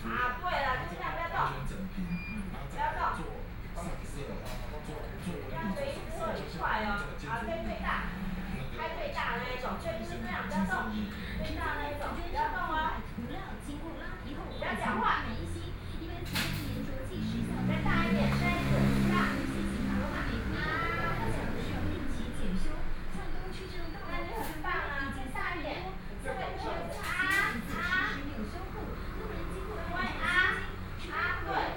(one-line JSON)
{"title": "Zhongyang N. Rd., Beitou Dist. - Mother and child", "date": "2013-10-14 20:08:00", "description": "Mother and child, Dental Clinic, TV sound, Physicians and the public dialogue, Binaural recordings, Sony Pcm d50+ Soundman OKM II", "latitude": "25.14", "longitude": "121.50", "altitude": "17", "timezone": "Asia/Taipei"}